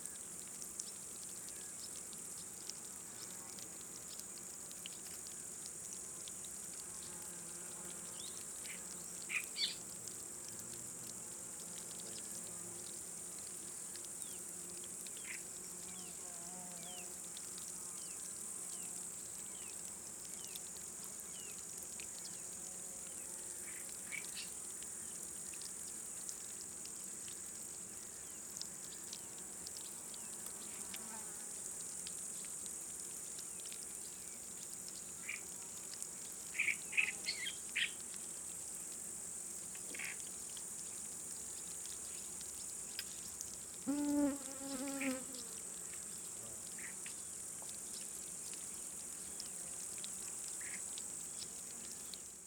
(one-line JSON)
{"title": "Sea shore bubbles, Jaaguranna", "date": "2010-07-18 21:32:00", "description": "bubbles coming from under the water", "latitude": "58.29", "longitude": "24.04", "timezone": "Europe/Tallinn"}